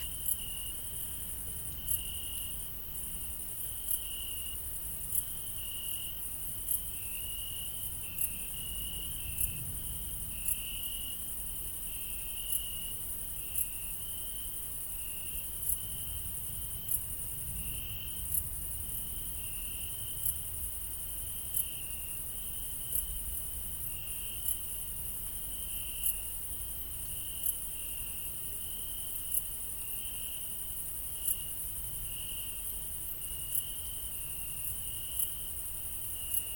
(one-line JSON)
{"title": "Ellend, Hangfarm, Magyarország - Crickets of the nightfall", "date": "2019-09-06 19:50:00", "description": "High-pitched crickets over mass of crickets sound in a small forest. One can listen to them only on the end of the day.", "latitude": "46.06", "longitude": "18.38", "altitude": "163", "timezone": "Europe/Budapest"}